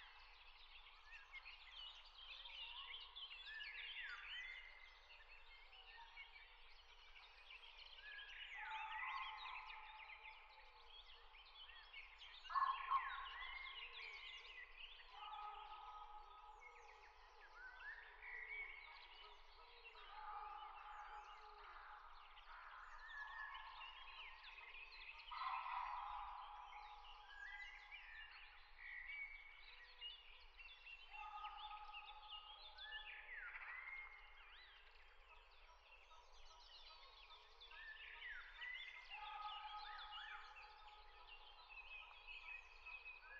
Warburg Nature Reserve, Henley-on-Thames, UK - Before the Dawn Chorus and Beyond Part 2
I made a similar recording in the same spot a year ago and wanted to compare the two bearing in mind the C19 lockdown. There are hardly any planes and the roads are a lot quieter. Sony M10